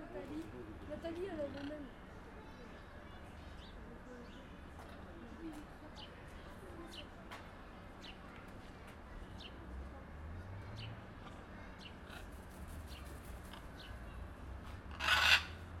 Recording of Blue-and-yellow macaws.
Ara blue et jaune (Ara ararauna)